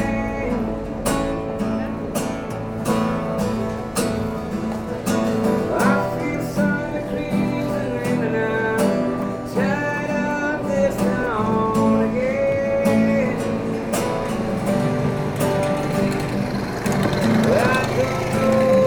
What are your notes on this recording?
A guitarist plays the precious song from Eddie Vedder called Guaranteed (Into The Wild).